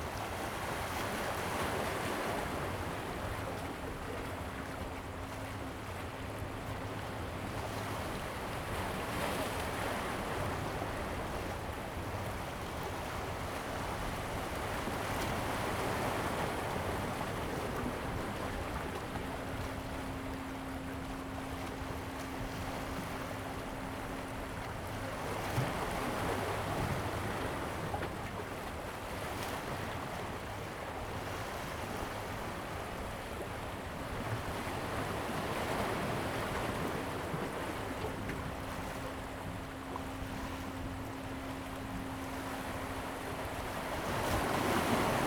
南濱公園, Hualien City - Sound of the waves
Sound of the waves, There are large cargo ships on the sea
Zoom H2n MS+ XY
Hualien County, Taiwan, August 2014